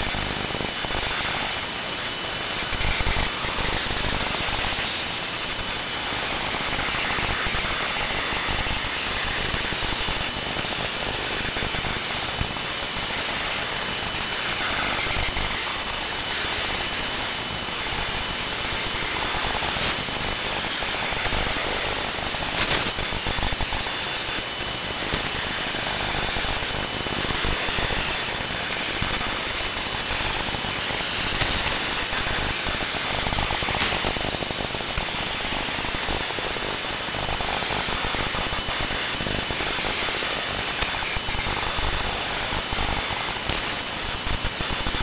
radiostorm, statics 133.6823MHz, Nooelec SDR + upconverter
This is part of a series of recordings, shifting to another frequency spectrum. Found structures, mainly old cattle fences and unused telephone lines are used as long wire antennas wit a HF balun and a NESDR SMArt SDR + Ham It Up Nano HF/MF/NF upconverter.